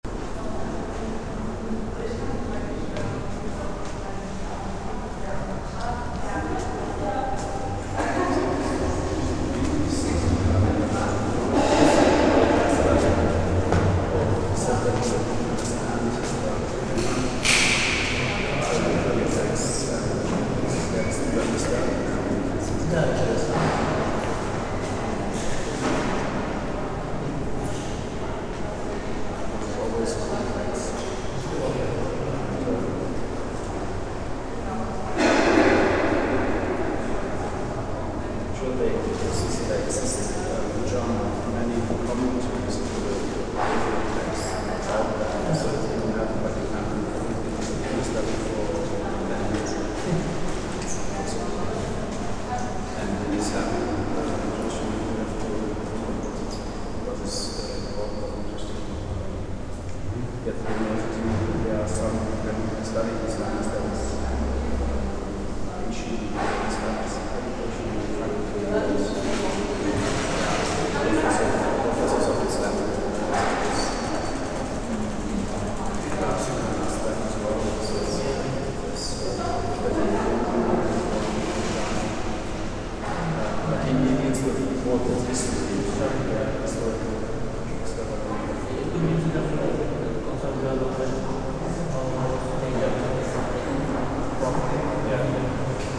Am Hof, Bonn, Deutschland - Passageway Uni Bonn
This large passageway has a very specific acoustics due to its baroque architecture with its marble floors and smooth walls.
Nordrhein-Westfalen, Deutschland, 24 August